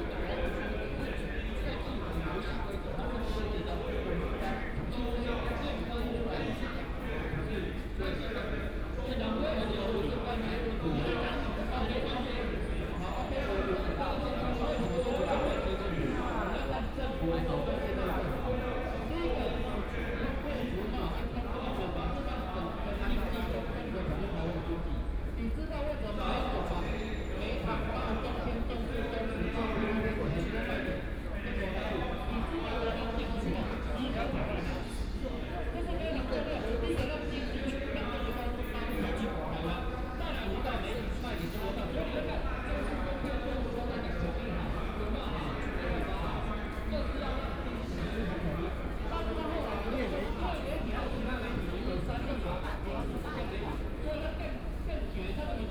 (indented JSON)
{
  "title": "Qingdao E. Rd., Taipei City - Student movement",
  "date": "2014-04-01 16:02:00",
  "description": "Student movement scene, Different groups sit in the road, Their discussion on the topic and to share views on the protest",
  "latitude": "25.04",
  "longitude": "121.52",
  "altitude": "15",
  "timezone": "Asia/Taipei"
}